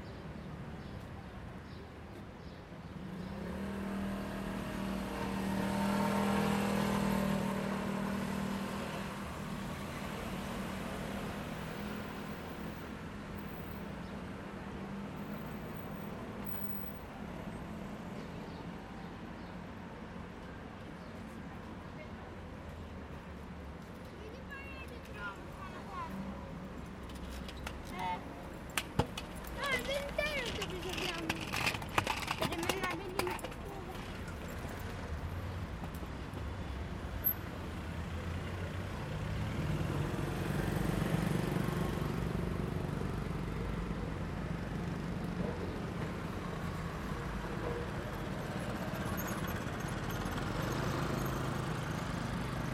Μιχαήλ Καραολή, Ξάνθη, Ελλάδα - Mpaltatzi Square/ Πλατεία Μπαλτατζή 19:45
Mild traffic, people passing by, talking.